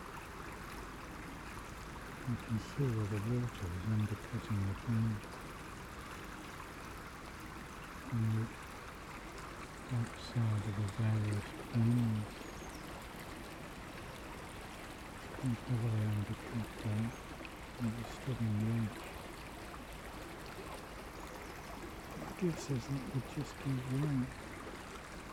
Contención Island Day 1 inner northeast - Walking to the sounds of Contención Island: Day 1 Tuesday January 5th
The Drive Moorfield Lodore Road
In the Little Dene
down by the stream
which is very full after the recent storm
The grid at the entrance to the culvert is clogged
the water drops about a foot
through the trapped accumulated vegetation and detritus
A tree has been taken down
and cut into trunk size roundels